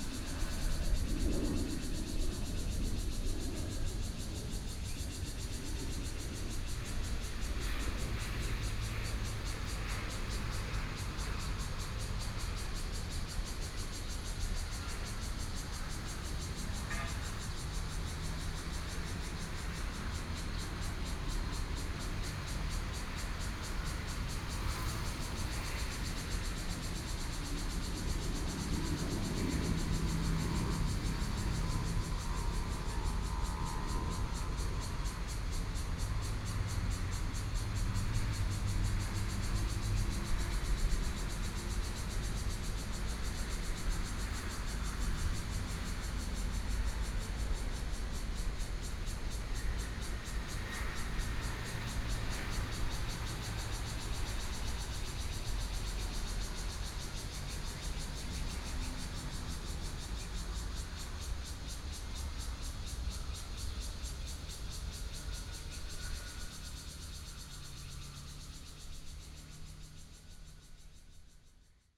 In large trees, Traffic Sound, Cicadas sound, Fighter flying through
Hualien County, Taiwan, August 2014